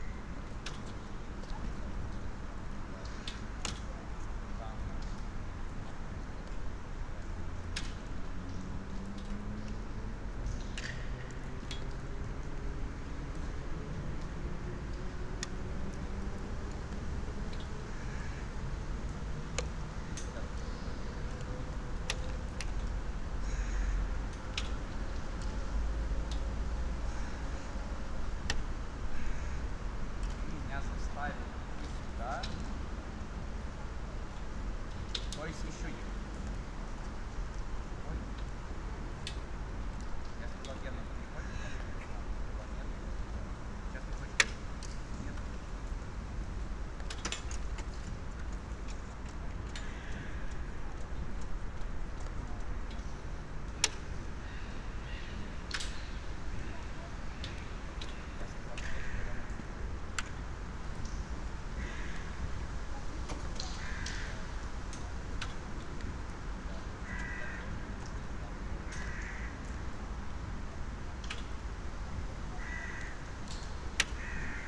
Jūrmala, Latvia, empty sport hall
after a rain. waters dripping on metallic constructions. Majori Sport Hall: Recognition at the Award of Latvian architecture 2007
Nomination for Russian architecture prize Arhip 2009.
Shortlisted at EU prize for Contemporary architecture - Mies Van Der Rohe Award 2009